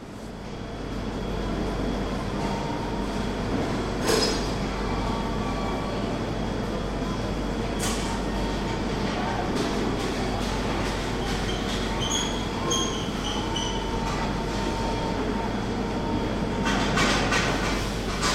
berlin, hermannplatz: warenhaus, lebensmittelabteilung - the city, the country & me: food hall at karstadt department store
the city, the country & me: may 13, 2008